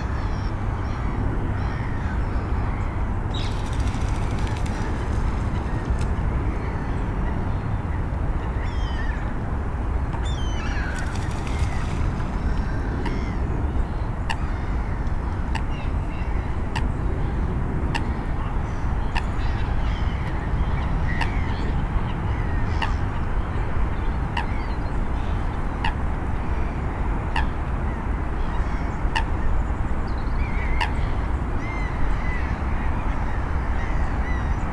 Thamesmead, UK - Southmere 3
Recorded with a stereo pair of DPA 4060s and a Marantz PMD661.